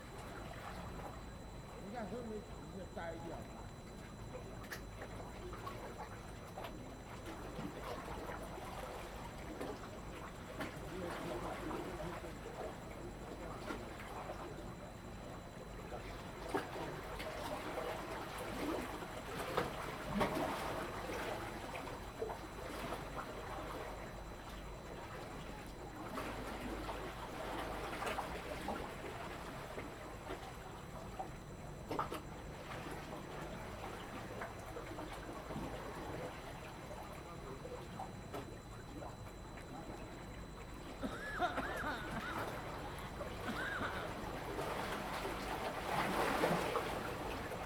永安漁港, Taoyuan City - Slip block and Waves
In the fishing port, Slip block, Waves, Zoom H2n MS+XY